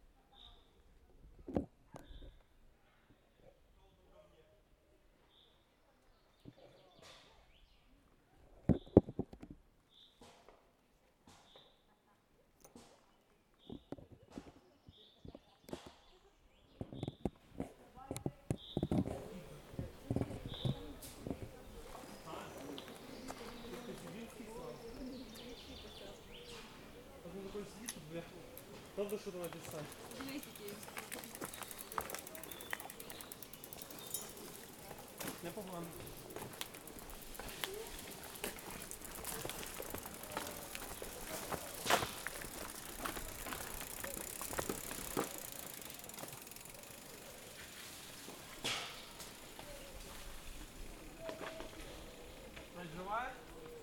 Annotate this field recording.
Ukraine / Vinnytsia / project Alley 12,7 / sound #17 / stone, trail and cyclists